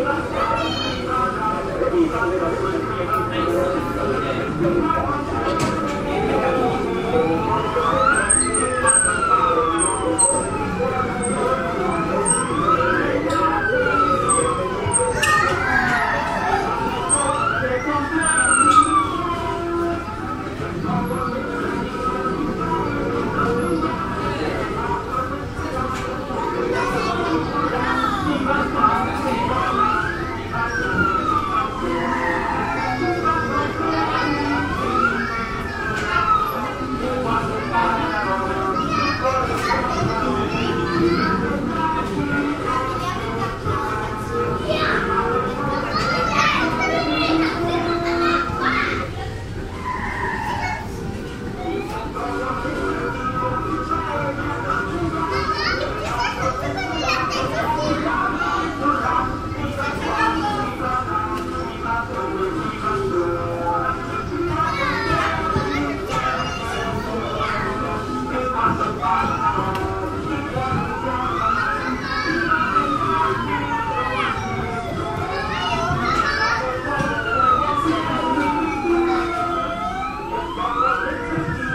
pendant le tournage de Signature
St Denis, Reunion, 8 August 2010